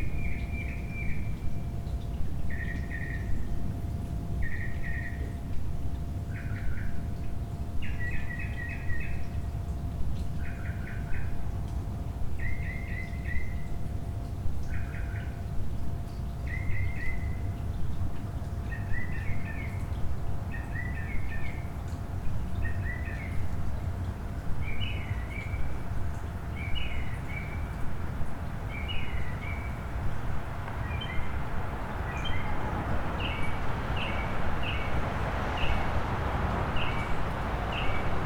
{
  "title": "london, 2006, saxophone busker, invisisci",
  "latitude": "51.51",
  "longitude": "-0.10",
  "altitude": "3",
  "timezone": "GMT+1"
}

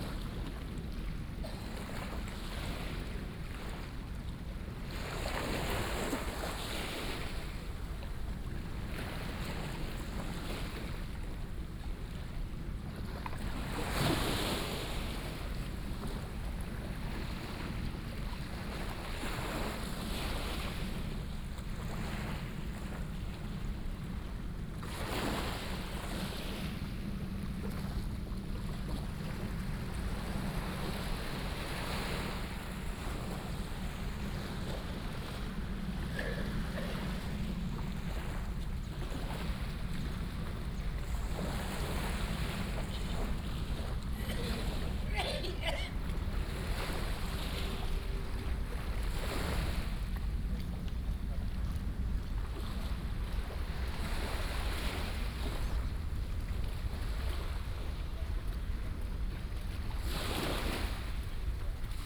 {
  "title": "六塊厝漁港, Tamsui Dist., New Taipei City - Small fishing port",
  "date": "2016-04-16 07:25:00",
  "description": "Small fishing port, Sound of the waves",
  "latitude": "25.24",
  "longitude": "121.45",
  "altitude": "3",
  "timezone": "Asia/Taipei"
}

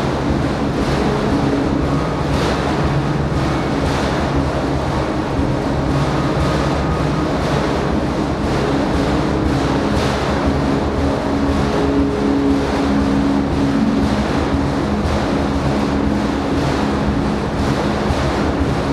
{
  "title": "Riesaer Str., Dresden, Deutschland - Kältekonzert",
  "date": "2014-01-25 22:02:00",
  "description": "Window panes in former print shop vibrate during a concert by Jacob korn",
  "latitude": "51.08",
  "longitude": "13.73",
  "altitude": "116",
  "timezone": "Europe/Berlin"
}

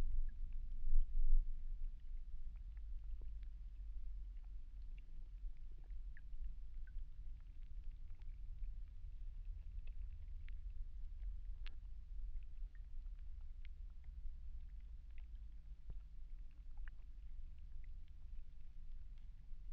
{
  "title": "Houtrustweg - hydrophone rec in the shore, one mic inside a pipe",
  "date": "2009-05-01 14:09:00",
  "description": "Mic/Recorder: Aquarian H2A / Fostex FR-2LE",
  "latitude": "52.09",
  "longitude": "4.26",
  "altitude": "5",
  "timezone": "Europe/Berlin"
}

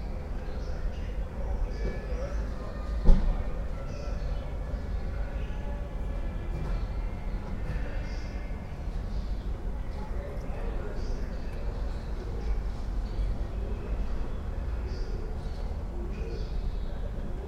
{
  "title": "from/behind window, Mladinska, Maribor, Slovenia - nostalgia",
  "date": "2013-05-10 18:23:00",
  "description": "swallows, pigeons, blackbirds, cafetiera, cars, song from a radio",
  "latitude": "46.56",
  "longitude": "15.65",
  "altitude": "285",
  "timezone": "Europe/Ljubljana"
}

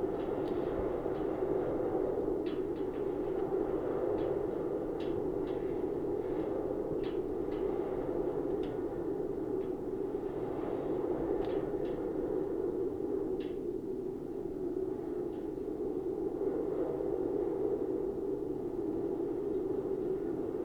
{
  "title": "Bowland Knotts - Wind in wires",
  "date": "2020-09-19 05:35:00",
  "latitude": "54.04",
  "longitude": "-2.42",
  "altitude": "420",
  "timezone": "Europe/London"
}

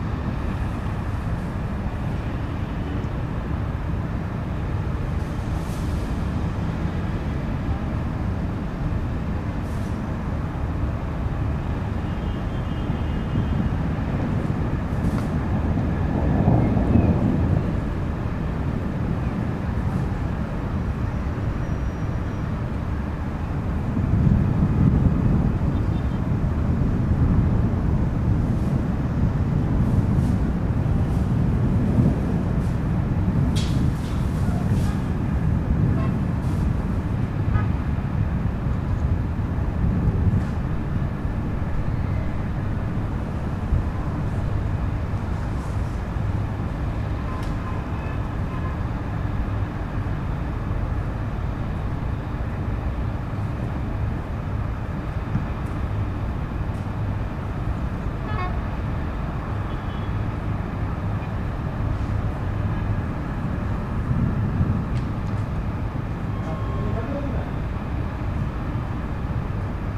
{
  "title": "Istanbul, thunderstorm approaching the city",
  "date": "2010-09-26 17:24:00",
  "description": "The Bosphorus opens to Marmara sea in the south. Any thundersturm that wants to get into the city to sweep pouring rain through the dirty streets first needs to gather forces in an electromagnetic assembly in order to crush and strike at one time. Here we hear thunders gaining force while approaching the city from the open sea.",
  "latitude": "41.05",
  "longitude": "29.00",
  "altitude": "66",
  "timezone": "Europe/Istanbul"
}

